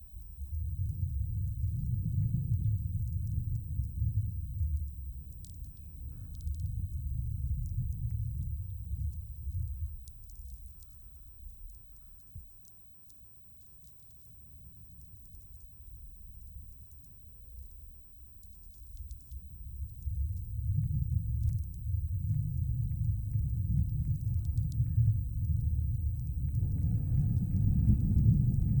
Utena, Lithuania, hangar and vlf
small local aeroport. contact microphones on hangar door holder and electromagnetic antenna in the air
Utenos apskritis, Lietuva, 30 July 2019